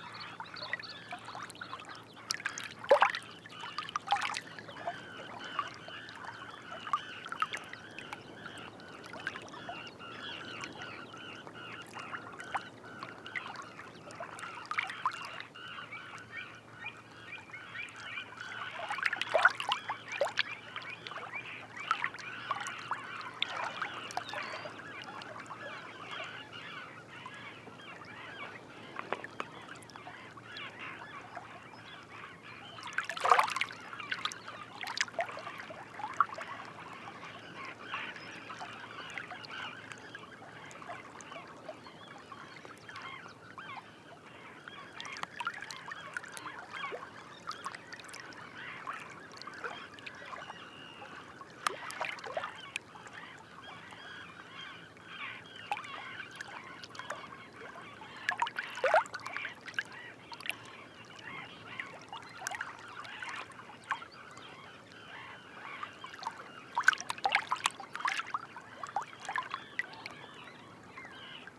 Loix, France - Salt marshes
Between the salt marshes, sound of the lapping. At the backyard : Pied Avocet, Little Egret, Black-winged Stilt and Zitting Cisticola.
20 May 2018